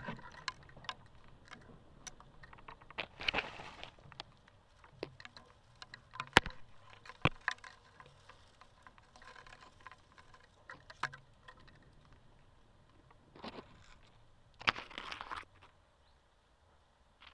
Cuenca, Cuenca, España - #SoundwalkingCuenca 2015-11-19 Wooden bridge, contact mic improvisation
Contact mic improvisation on a wooden bridge on the Júcar River, Cuenca, Spain.
C1 contact microphones -> Sony PCM-D100
19 November 2015, 13:43